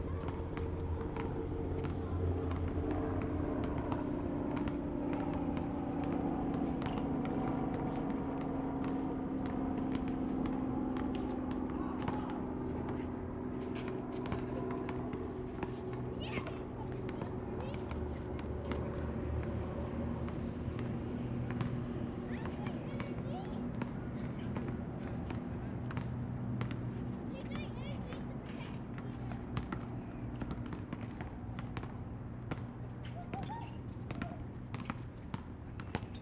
park opposite of the pacific design centre, north san vicente boulevard, west hollywood, early afternoon; children playing basketball; distant trafic;